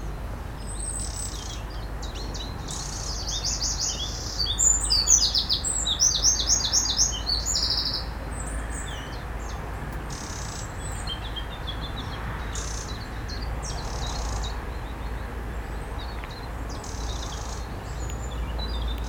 Anneville-Ambourville, France - Wren bird
A wren bird is unhappy we travel by this way and sings loudly to spread us.